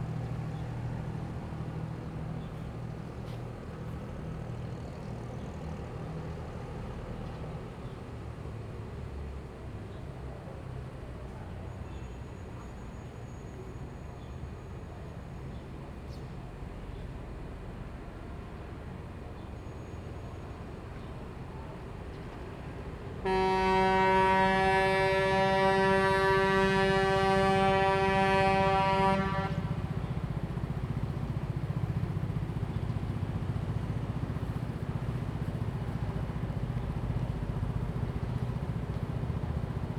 Liuqiu Township, Taiwan - Near the harbor
Near the harbor, In the square in front of the temple, Cruises and Yachts, Whistle sound
Zoom H2n MS +XY